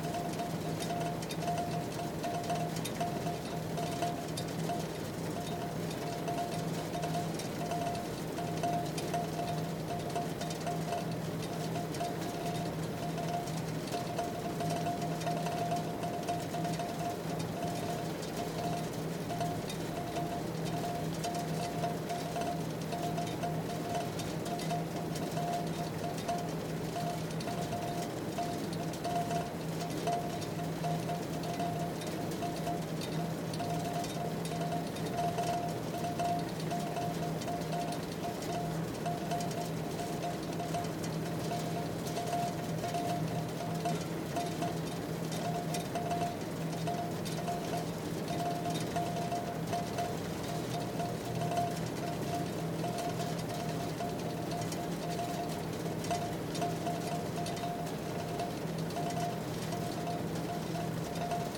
Pennygillam Industrial Estate, Launceston, Cornwall, UK - Wool being rubbed between boards at the end of the carding process, to prepare a top, ready to spin
This is the sound of wool being prepared for wool-spinning at the Natural Fibre Company. Unlike the worsted-spun yarns, wool-spun yarns are prepared by being carded before being spun. At the end of the massive carding machine, the wool is divided into small sections and then rubbed between boards to produce fine tops. These tops will then be spun and plied to create lovely, bouncy, woollen-spun yarns.